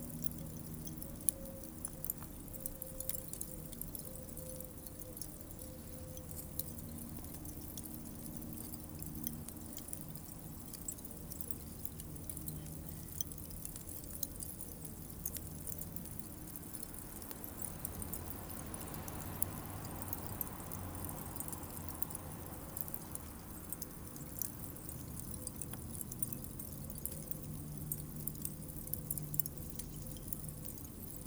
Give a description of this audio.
Doing a barbecue in the garden. The charcoal becomes red.